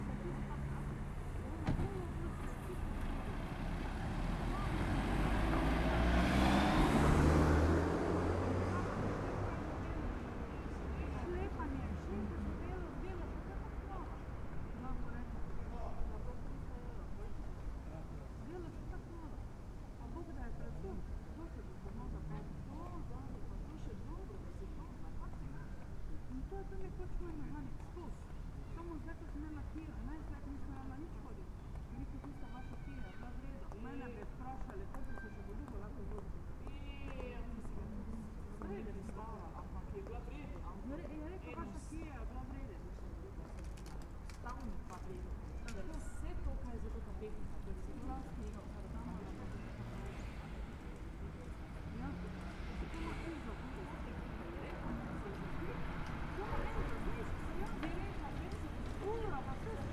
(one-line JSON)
{"title": "Magdalenski park, Slovenia - corners for one minute", "date": "2012-08-08 15:34:00", "description": "one minute for this corner - jezdarska ulica and žitna ulica", "latitude": "46.55", "longitude": "15.64", "altitude": "280", "timezone": "Europe/Ljubljana"}